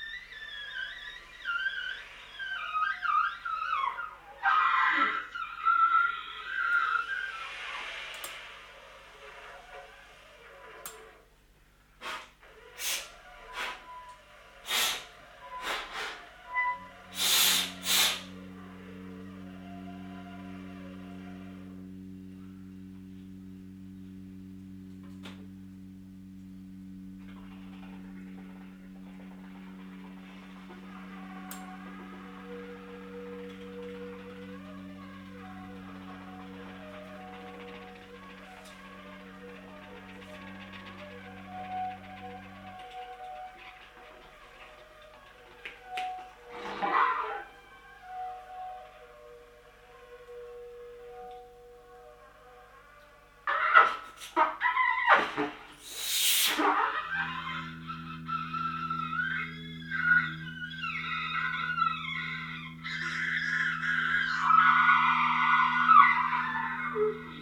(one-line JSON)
{"title": "private concert, nov 22, 2007 - Köln, private concert, nov 22, 2007", "description": "excerpt from a private concert. playing: dirk raulf, sax - thomas heberer, tp - matthias muche, trb", "latitude": "50.94", "longitude": "6.94", "altitude": "57", "timezone": "GMT+1"}